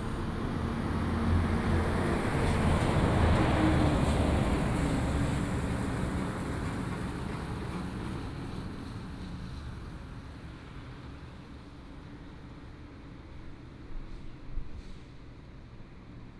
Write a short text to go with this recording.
in the morning, Traffic sound, Bird call, Binaural recordings, Sony PCM D100+ Soundman OKM II